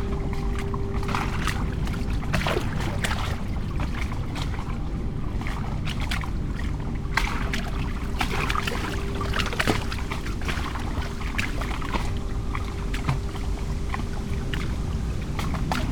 {"title": "Plänterwald, Berlin, Germany - lapping waves, concrete wall, wind, walking", "date": "2015-09-06 16:55:00", "description": "river Spree\nSonopoetic paths Berlin", "latitude": "52.49", "longitude": "13.49", "altitude": "37", "timezone": "Europe/Berlin"}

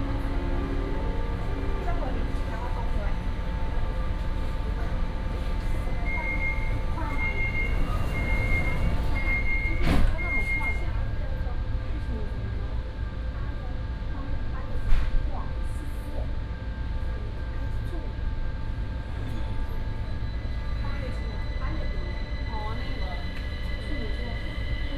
{"title": "Orange Line (KMRT), 高雄市 - Take the MRT", "date": "2018-03-30 10:56:00", "description": "Take the MRT, In-car message broadcasting", "latitude": "22.62", "longitude": "120.34", "altitude": "10", "timezone": "Asia/Taipei"}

